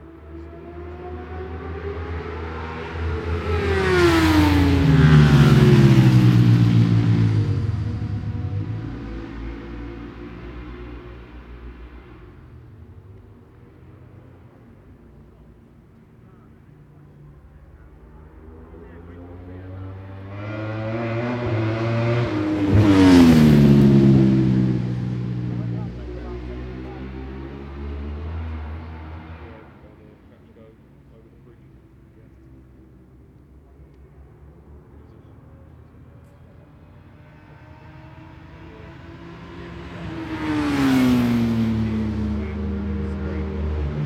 {"title": "Brands Hatch GP Circuit, West Kingsdown, Longfield, UK - WSB 2004 ... superbike qualifying ...", "date": "2004-07-31 11:00:00", "description": "world superbikes 2004 ... superbike qualifying ... one point stereo mic to mini disk ...", "latitude": "51.35", "longitude": "0.26", "altitude": "151", "timezone": "Europe/London"}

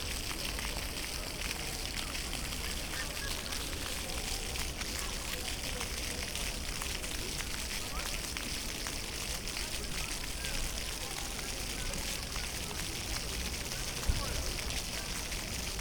{"title": "Breslauer Platz, Hbf Köln, Deutschland - fountain", "date": "2018-07-05 21:00:00", "description": "fountain at Breslauer Platz, near Köln main station / Hauptbahnhof\n(Sony PCM D50, internal mics)", "latitude": "50.94", "longitude": "6.96", "altitude": "49", "timezone": "GMT+1"}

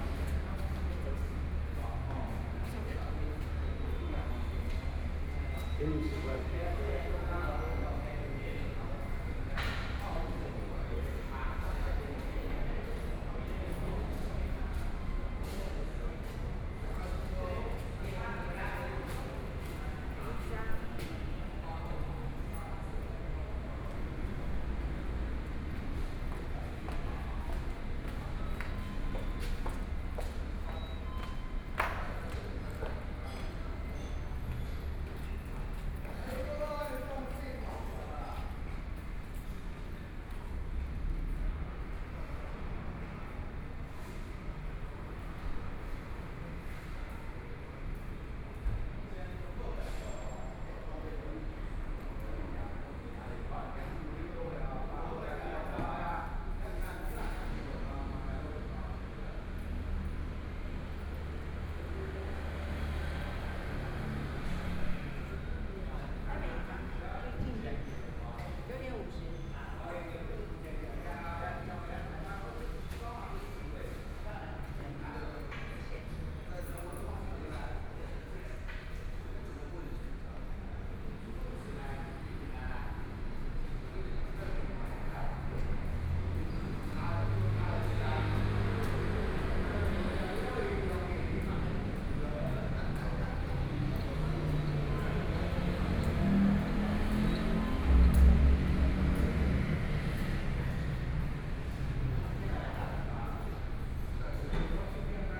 {"title": "新竹客運苗栗總站, Miaoli City - Station hall", "date": "2013-10-08 09:46:00", "description": "in the Bus Transfer Station, Zoom H4n+ Soundman OKM II", "latitude": "24.57", "longitude": "120.82", "altitude": "59", "timezone": "Asia/Taipei"}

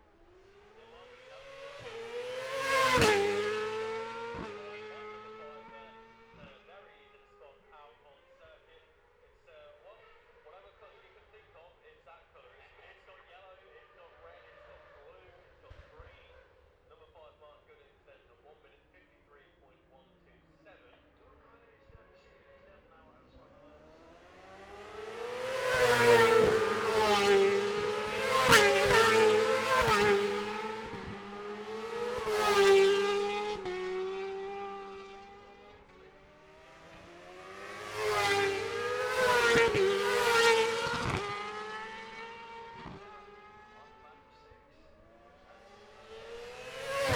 {"title": "Jacksons Ln, Scarborough, UK - gold cup 2022 ... 600cc qualifying ...", "date": "2022-09-16 13:58:00", "description": "the steve henshaw gold cup 2022 ... 600cc qualifying group 1 and group 2 ... dpa 4060s clipped to bag to zoom f6 ...", "latitude": "54.27", "longitude": "-0.41", "altitude": "144", "timezone": "Europe/London"}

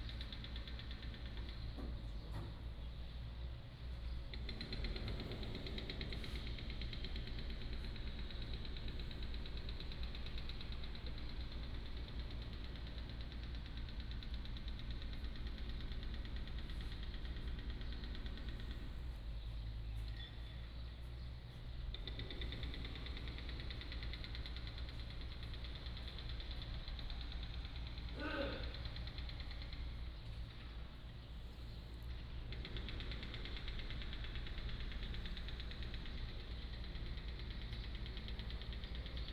{"title": "Beigan Township, Lienchiang County - small village in the morning", "date": "2014-10-14 07:25:00", "description": "In front of the temple, A small village in the morning, the distant sound from Construction", "latitude": "26.22", "longitude": "120.00", "altitude": "16", "timezone": "Asia/Taipei"}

